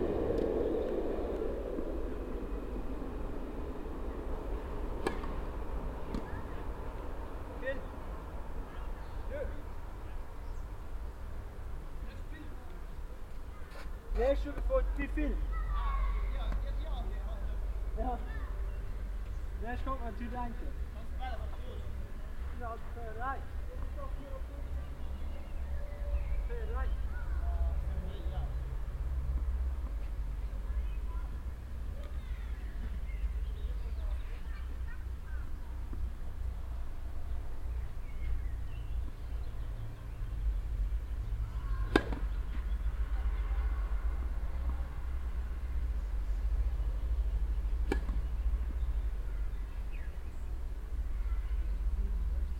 troisvierges, tennis court
At a tennis court. Two boys playing and then finishing their match. in the distance a train passing by.
Troisvierges, Tennisplatz
Auf dem Tennisplatz. Zwei Jungen spielen und beenden dann ihr Match. In der Ferne ein vorbeifahrender Zug.
Troisvierges, court de tennis
Sur un court de tennis. Deux garçons jouent et terminent leur partie ; au lointain on entend passer un train.
Project - Klangraum Our - topographic field recordings, sound objects and social ambiences
Troisvierges, Luxembourg